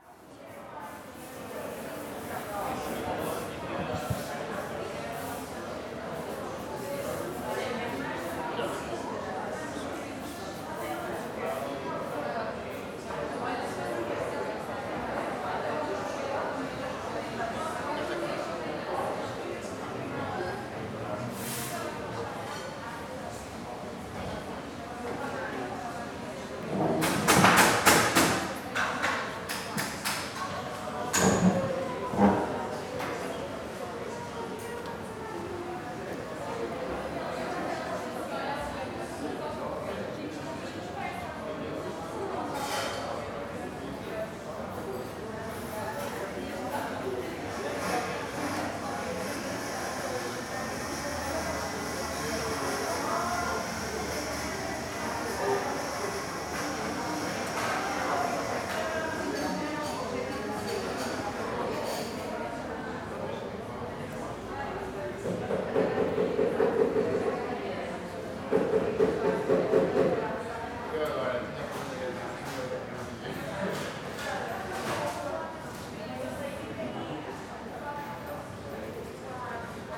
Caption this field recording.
coffee house bustling with customers.